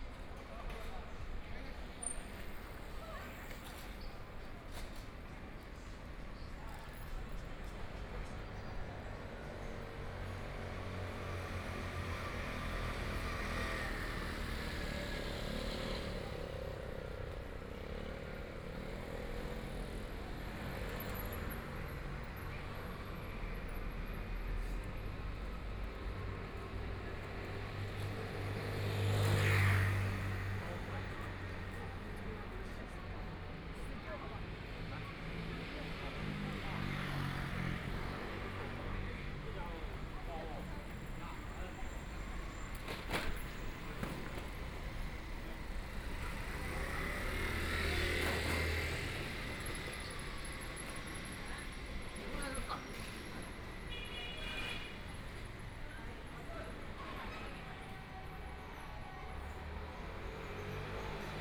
Sichuan Road, Shanghai - Small streets at night
Small streets at night, Traffic Sound, Old small streets, Narrow channel, Binaural recordings, Zoom H6+ Soundman OKM II